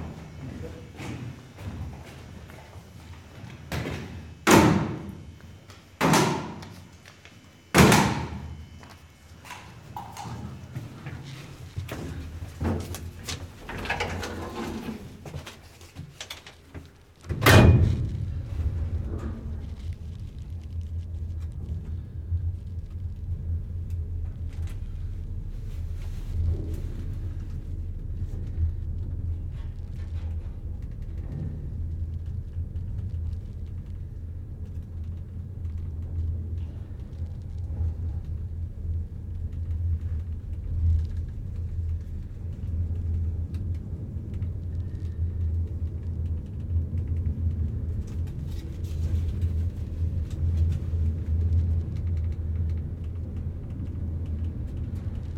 climbing the stairs and taking the lift to the observation deck on the church.
28 October